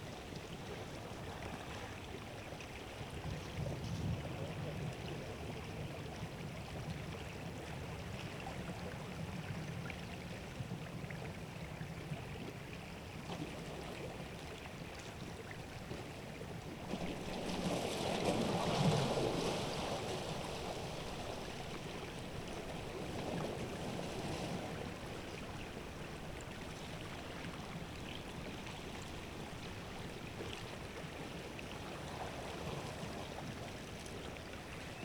Anykščiai, Lithuania, spring river - spring river
River Sventoji, near Puntukas mythological stone. Watercourse is still frozen on this place, however you may hear how ice cracks under the spring sun